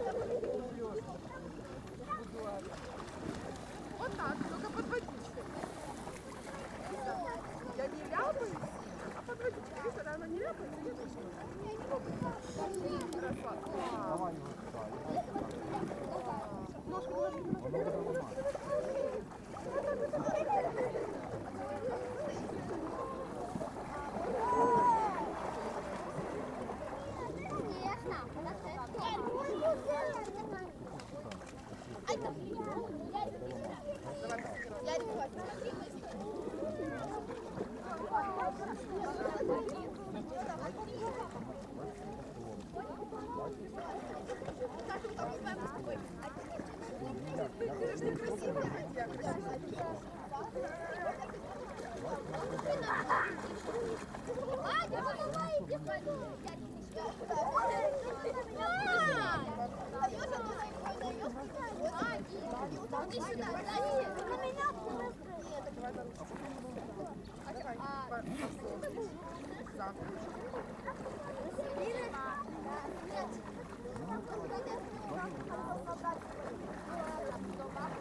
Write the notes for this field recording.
Ukraine / Vinnytsia / project Alley 12,7 / sound #4 / beach near the water